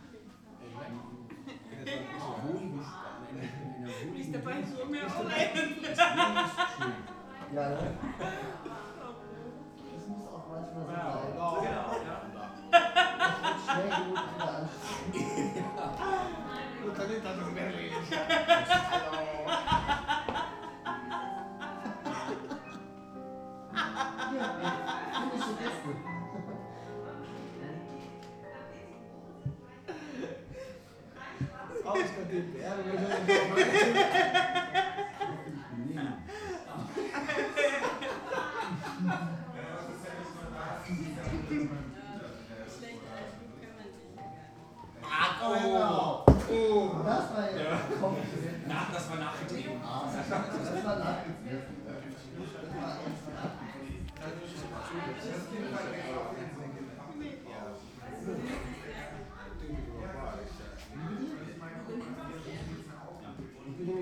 Berlin, Hobrecht- / Bürknerstr. - the city, the country & me: bar people
the city, the country & me: november 3, 2011
Berlin, Germany